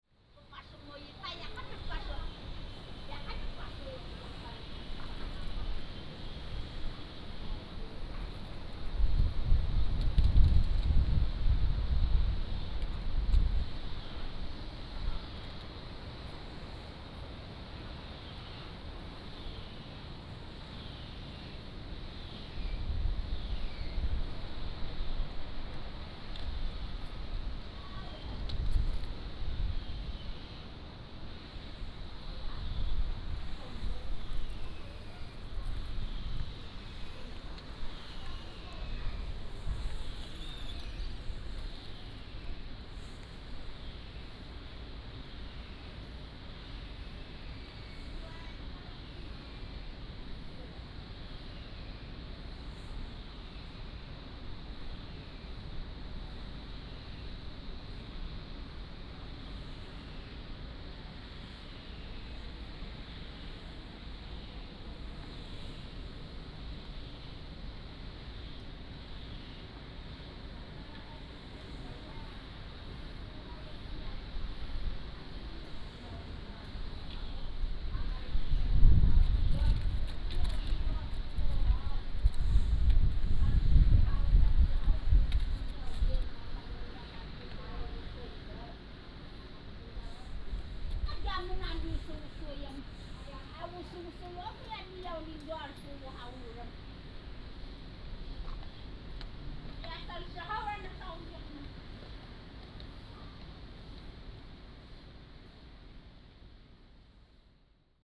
{"title": "東清村, Koto island - At the door of the small shops", "date": "2014-10-29 13:04:00", "description": "Small tribes, At the door of the small shops", "latitude": "22.06", "longitude": "121.57", "altitude": "9", "timezone": "Asia/Taipei"}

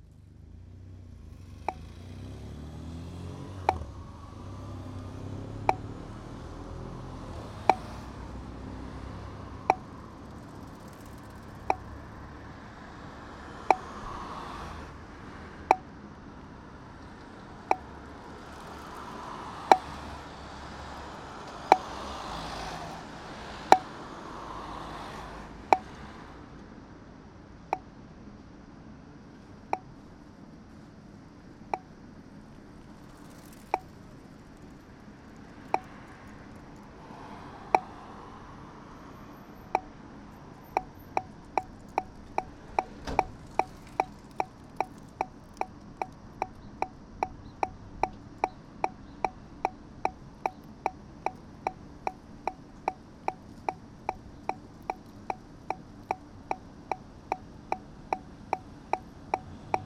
{"title": "Frederiksberg, Denmark - Red light", "date": "2019-04-16 11:00:00", "description": "A kind of less common red light signal into Copenhagen. It was worth the catch too.", "latitude": "55.68", "longitude": "12.53", "altitude": "13", "timezone": "Europe/Copenhagen"}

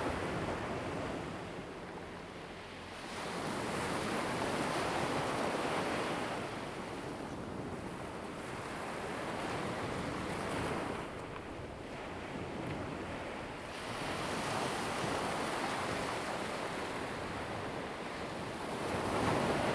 {"title": "Koto island, Taitung County - At the beach", "date": "2014-10-30 08:45:00", "description": "At the beach, Waves\nZoom H2n MS+XY", "latitude": "22.04", "longitude": "121.53", "altitude": "10", "timezone": "Asia/Taipei"}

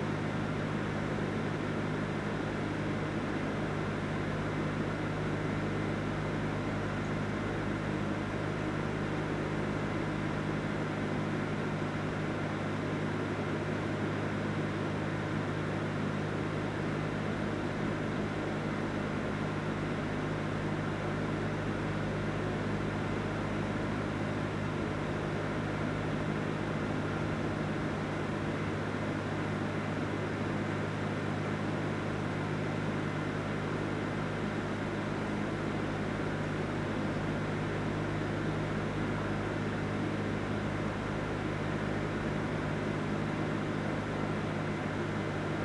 {"title": "hohensaaten/oder: east lock - the city, the country & me: generator", "date": "2015-01-04 14:16:00", "description": "generator of the east lock\nthe city, the country & me: january 4, 2016", "latitude": "52.87", "longitude": "14.15", "altitude": "2", "timezone": "Europe/Berlin"}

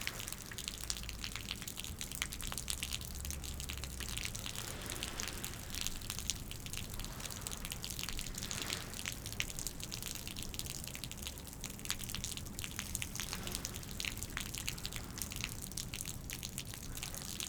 {"title": "Harbour Rd, Seahouses, UK - broken guttering ...", "date": "2018-11-04 16:15:00", "description": "broken guttering ... a gentle shower produces a steady flow ... bird call ... herring gull ... background noise ... lavalier mics clipped to baseball cap ...", "latitude": "55.58", "longitude": "-1.65", "altitude": "6", "timezone": "Europe/London"}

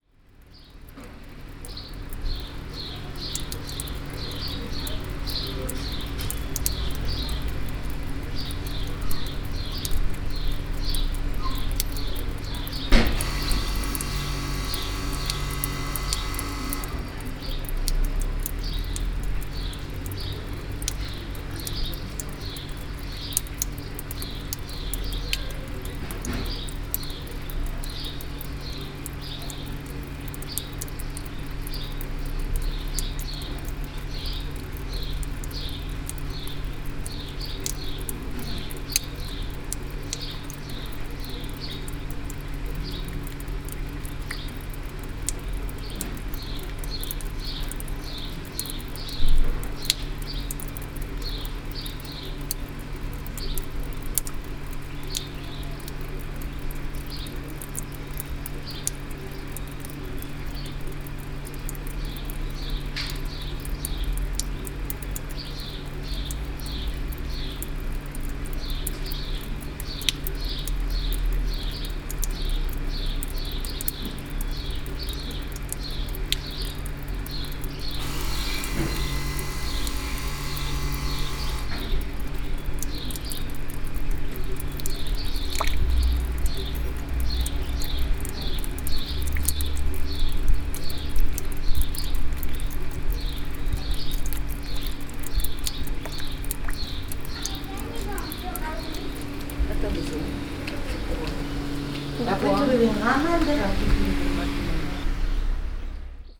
{"date": "2011-07-12 17:05:00", "description": "Florac, Rue Armand Jullie, the fountain", "latitude": "44.32", "longitude": "3.59", "altitude": "553", "timezone": "Europe/Paris"}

